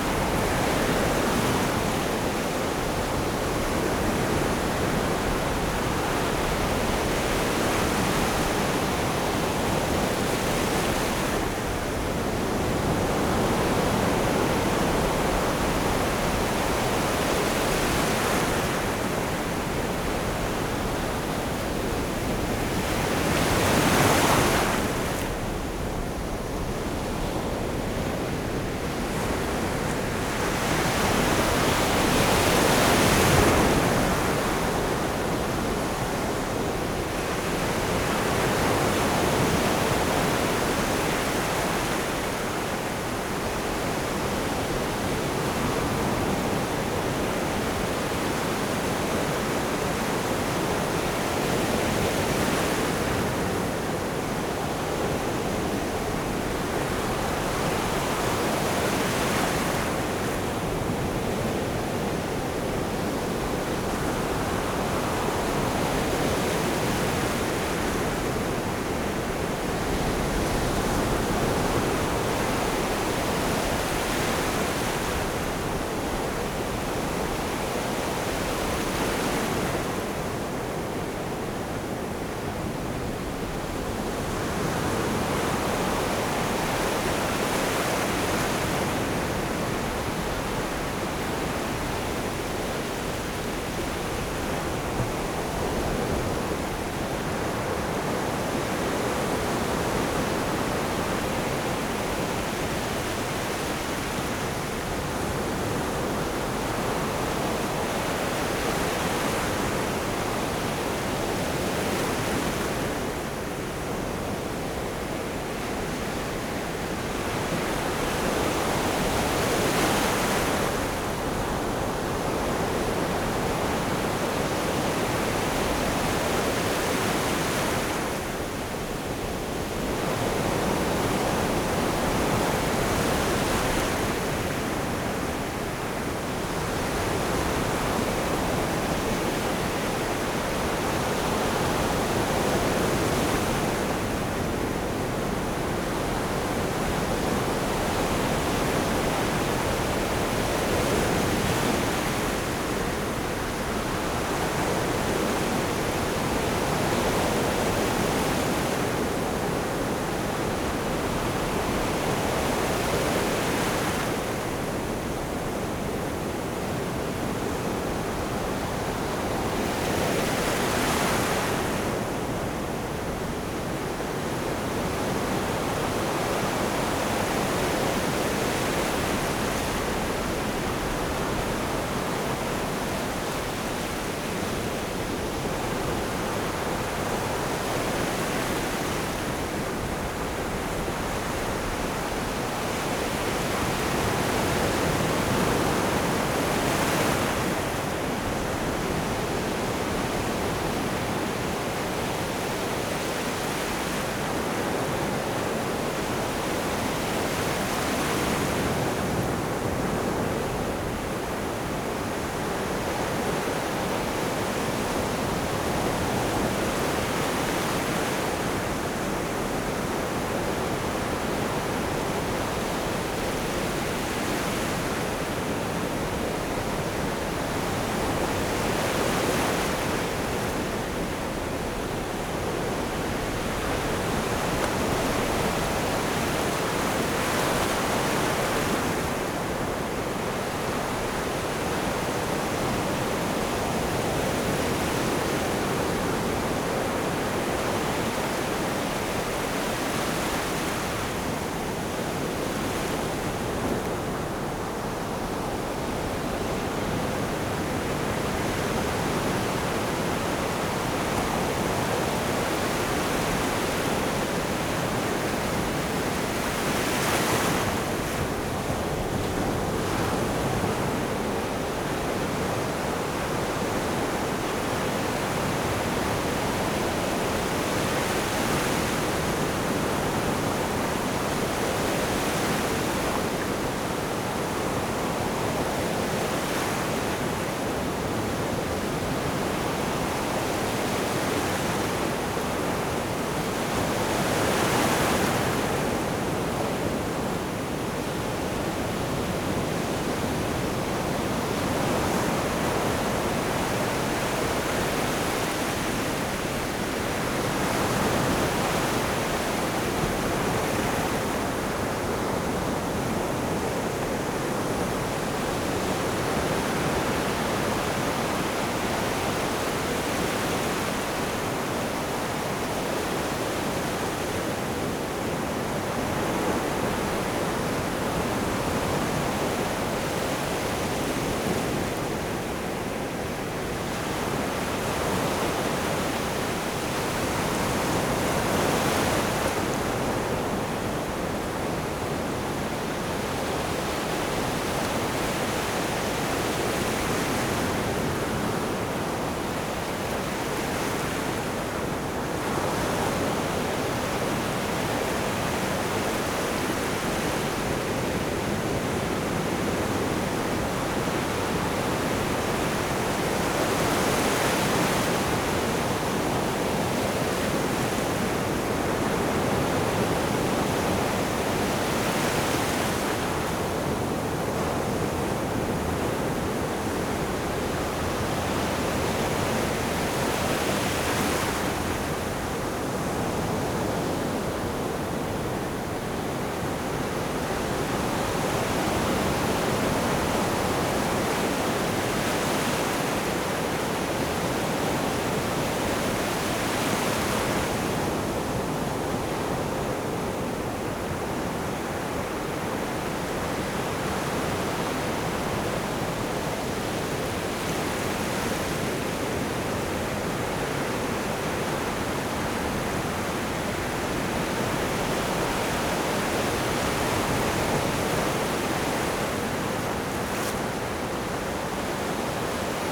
Casitas, Veracruz - Waves in the sea in the Gulf of Mexico
At 5m into the sea, close recording of the waves (at 1,5m from the water aprox).
Recorded on the beach of Casitas in the state of Veracruz, in front of the hotel Coco Loco.
Sound recording done during a residency at Casa Proal (San Rafael, Veracruz).
Recorded by an ORTF Setup Schoeps CCM4x2 in a Cinela Windscreen
Recorder Sound Devices 833
Sound Ref: MX-200203
GPS: 20.267035, -96.808354